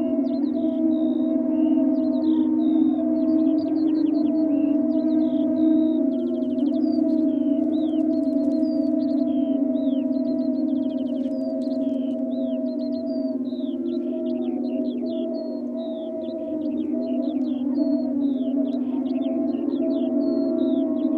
Harmonic Fields, Bamborgue and Skylark
Warm summer evening, a skylark hovering and diving above a line of 48 bamboo organ poles.
Lakes Alive brought French artist and composer, Pierre Sauvageot (Lieux Publics, France) to create an interactive musical soundscape on Birkrigg Common, near Ulverston, Cumbria from 3-5 June 2011.
500 Aeolian instruments (after the Greek god, Aeolus, keeper of the wind) were installed for 3 days upon the Common. The instruments were played and powered only by the wind, creating an enchanting musical soundscape which could be experienced as you rested or moved amongst the instruments.
The installation used a mixture of traditional and purpose built wind instruments. For example metal and wood wind cellos, long strings, flutes, Balinese paddyfield scarecrows, sirens, gongs, drums, bells, harps and bamboo organs. They were organised into six movements, each named after a different wind from around the world.
Cumbria, UK, 1 June 2011